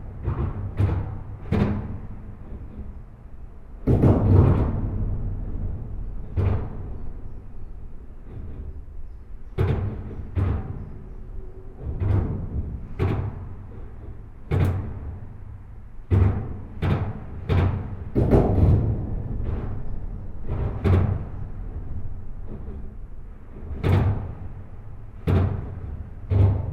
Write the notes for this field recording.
This viaduct is one of the more important road equipment in all Belgium. It's an enormous metallic viaduct on an highway crossing the Mass / Meuse river. This recording is made just below the expansion joint. Trucks make enormous impact, absorbed by special rubber piles. Feeling of this place is extremely violent.